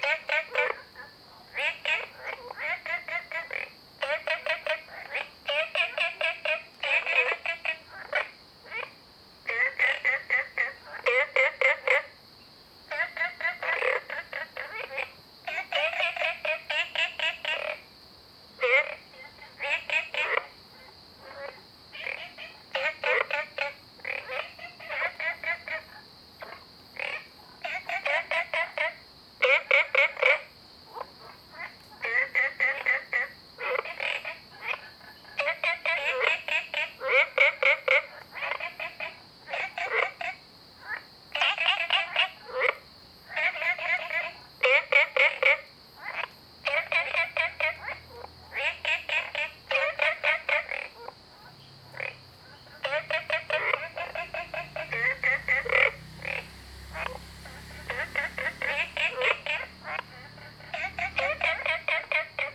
綠屋民宿, 桃米里 Taiwan - Frogs chirping and Cicadas cry
Frogs chirping, Cicadas cry, Ecological pool
Zoom H2n MS+XY
Puli Township, Nantou County, Taiwan, August 2015